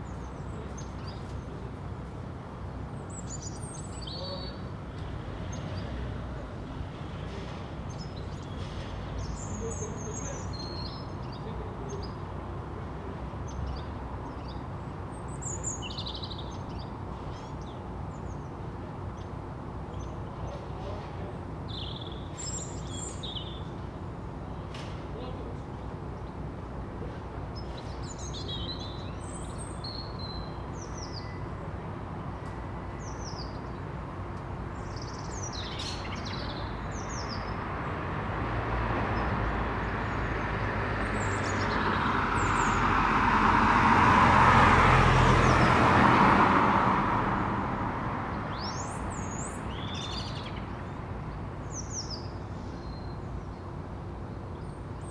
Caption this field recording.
The Poplars High Street Causey Street Linden Road, Cars bikes, and groups of schoolchildren pass, Rooftop woodpigeons chase, nod/bow, and tip in early courtship moves, Stained glass porch windows, soft blues and greys, A fan of dead ivy still clings, above the door of a front wall